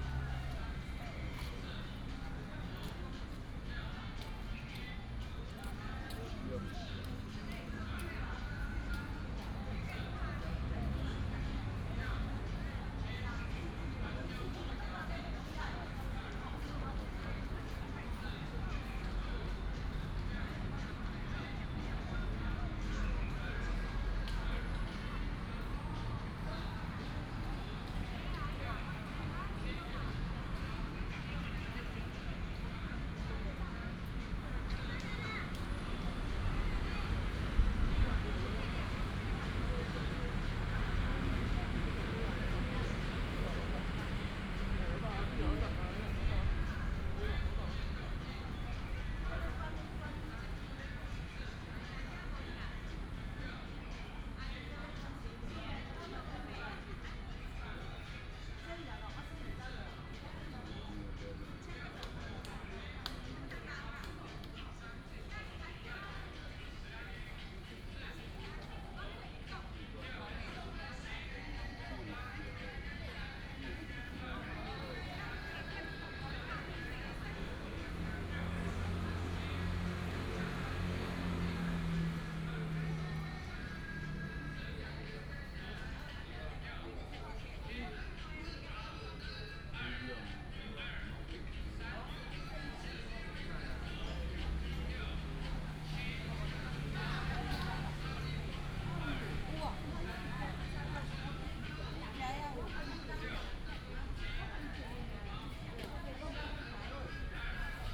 朝陽森林公園, Taoyuan Dist. - in the Park
in the Park, traffic sound, birds sound, Many elderly people are doing aerobics
27 July 2017, 05:54